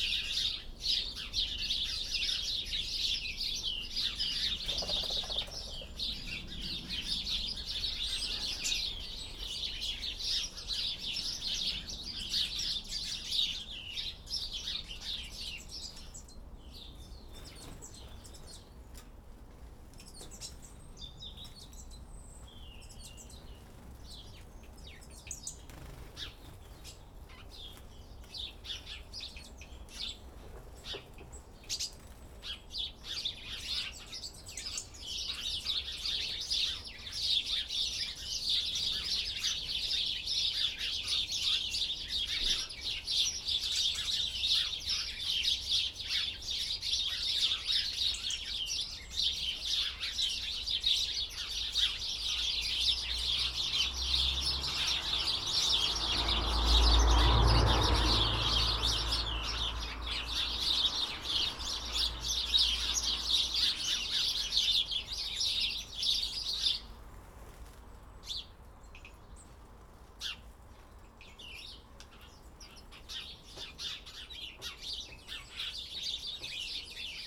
House sparrows, Gore Lane Cottages, Barwick, Herts, UK - House Sparrows
A recording of the flock of house sparrows that congregate in a large honeysuckle that sprawls over a brick shed at the rear of the cottages. A tawny owls calls, the geese occasionally honk at the top of the garden and the blue and great tits squabble on the feeders